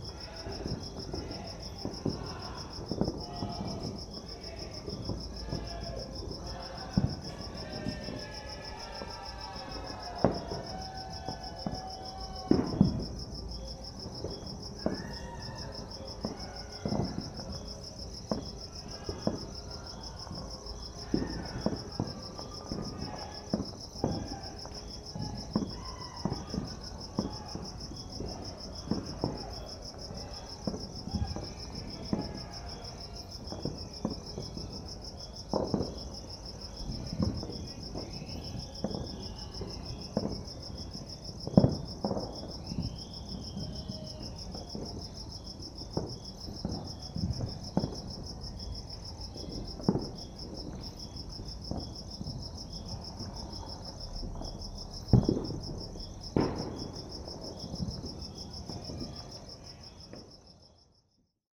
{"title": "Linden, Randburg, South Africa - New Year 2017", "date": "2017-01-01 00:06:00", "description": "Midnight revelry in suburban Johannesburg. Fireworks. Primo 172's to SD702", "latitude": "-26.14", "longitude": "28.00", "altitude": "1624", "timezone": "GMT+1"}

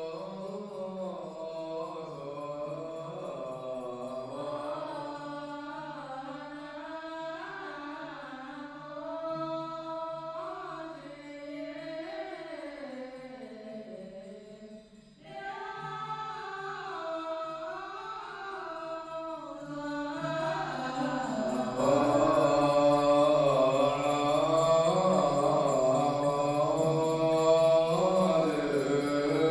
{"title": "Taitung stadium, Taitung City - Buddhist Puja chanting voice", "date": "2014-01-16 16:22:00", "description": "Walk inside and outside the stadium, Buddhist Puja chanting voice, Binaural recordings, Zoom H4n+ Soundman OKM II", "latitude": "22.75", "longitude": "121.15", "timezone": "Asia/Taipei"}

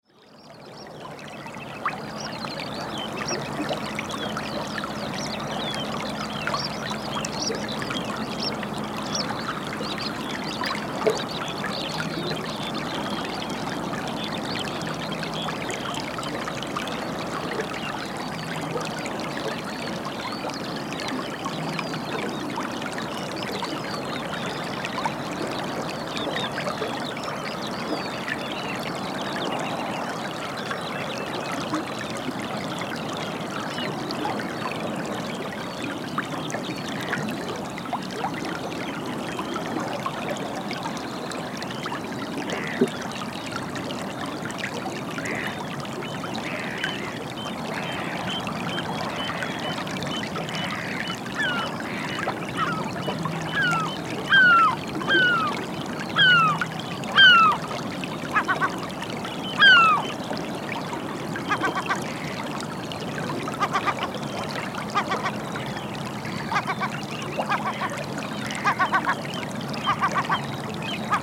{"title": "Orford Ness National Trust nature reserve, Suffolk. - Outflow from Stoney Ditch lagoon", "date": "2016-05-08 07:14:00", "description": "Water flowing out of lagoon fed by Stoney ditch with background birds and low frequency ships engines off Felixtowe.\nSound Devices 702/MKH8060", "latitude": "52.09", "longitude": "1.58", "altitude": "1", "timezone": "Europe/London"}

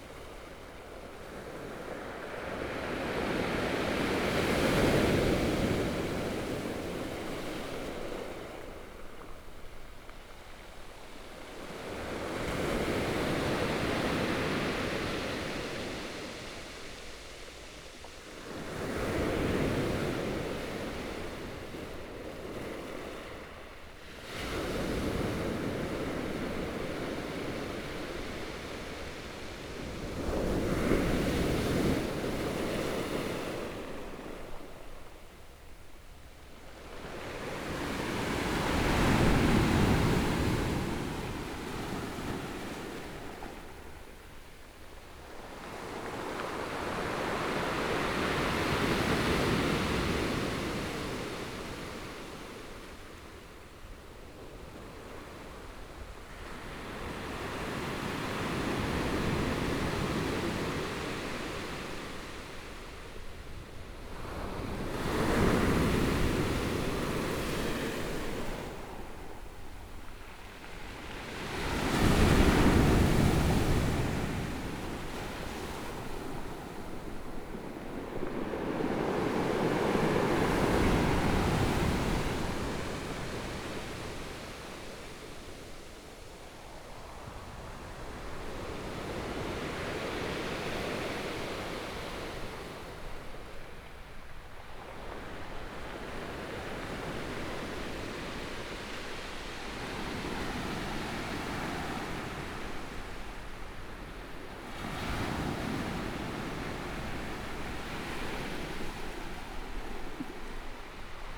{"title": "花蓮市, Taiwan - Sound of the waves", "date": "2014-02-24 13:48:00", "description": "Sound of the waves\nBinaural recordings\nZoom H4n+ Soundman OKM II + Rode NT4", "latitude": "23.98", "longitude": "121.62", "timezone": "Asia/Taipei"}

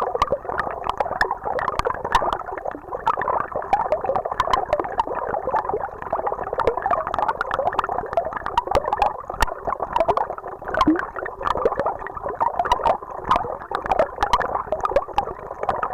{"title": "Ottilienquelle, Paderborn, Deutschland - Ottilienquelle unter Wasser", "date": "2020-07-11 16:00:00", "description": "a fountain\nof reciprocity\nback and forth\nappreciating\nevery offer of yours\nnever\ncomplaining\nabout\none of your moves\nor moods\na place for swimming\nout in the open\nsky", "latitude": "51.73", "longitude": "8.74", "altitude": "108", "timezone": "Europe/Berlin"}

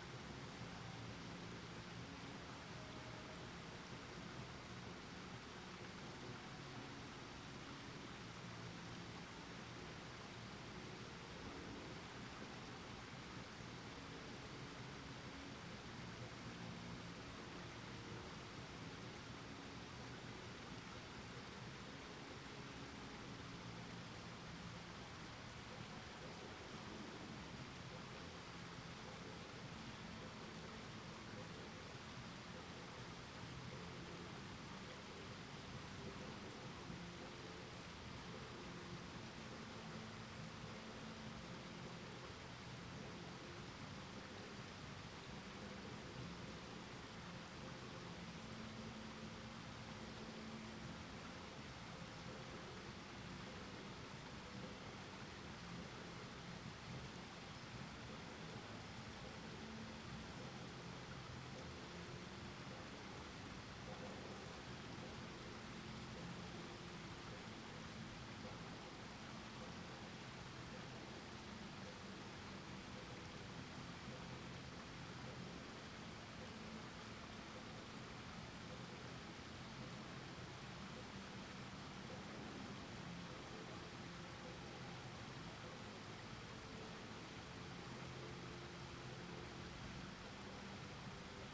{"title": "Berkeley - campus, Strawberry creek 4.", "date": "2010-04-07 06:01:00", "description": "sound of a creek rushing down the hill mixed with a sound of music some students were using to practice for some ridiculous dance show", "latitude": "37.87", "longitude": "-122.26", "altitude": "88", "timezone": "US/Pacific"}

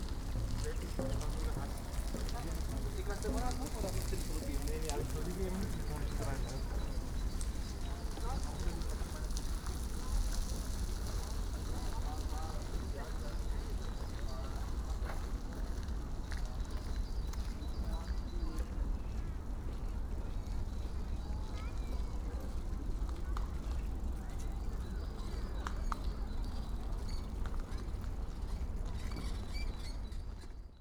{"title": "oderstraße/herfurthstraße: zufahrtstor zum flughafen tempelhof - tempelhof airport entrance", "date": "2011-01-29 15:30:00", "description": "saturday, sunny winter afternoon ambience at the entrance of former tempelhof airport.", "latitude": "52.48", "longitude": "13.42", "altitude": "51", "timezone": "Europe/Berlin"}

